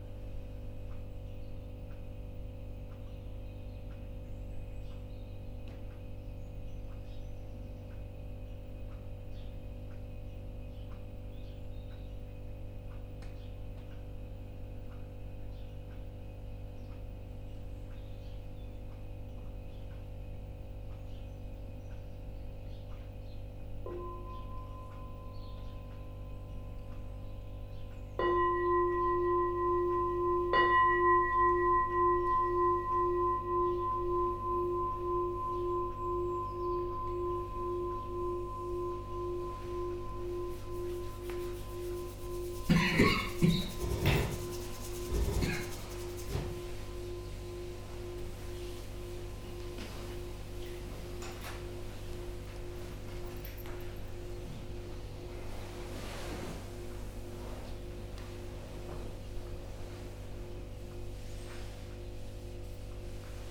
Unnamed Road, Dorchester, UK - New Barn Morning Meditation Pt3
This upload captures the end of the morning sitting, the bells sounding to invite participants to stand, bow and leave the room together. Participants are in noble silence as they leave (a period of silence lasting from the evening sitting meditation at 8.30pm to breakfast at 8.30am). (Sennheiser 8020s either side of a Jecklin Disk on a SD MixPre6)
October 2017